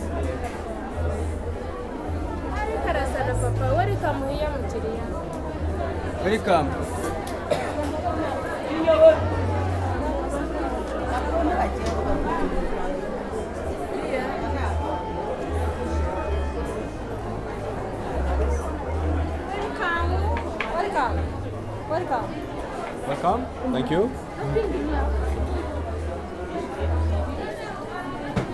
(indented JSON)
{
  "title": "Reco&Rwasco Building, Ave du Commerce, Huye, Rwanda - Butare Market",
  "date": "2018-03-29 12:42:00",
  "description": "Butare Market, inside:voices, sewing machines, music on the radio, children, outside: cars and motorcycles\nEdirol R9 recorder with built-in stereo microphone",
  "latitude": "-2.60",
  "longitude": "29.74",
  "altitude": "1748",
  "timezone": "Africa/Kigali"
}